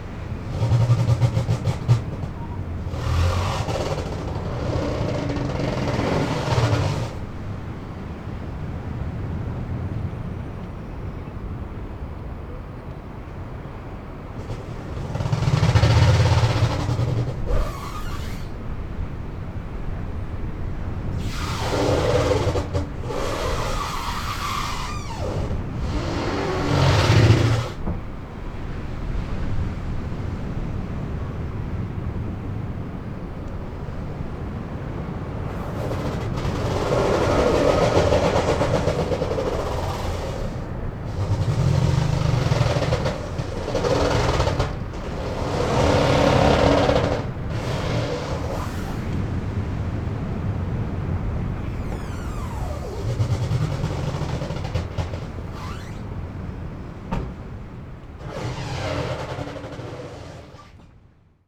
sassnitz: fischereihafen - the city, the country & me: fishing harbour
ship rubbing against a fender (in this case a truck tyre)
the city, the country & me: october 5, 2010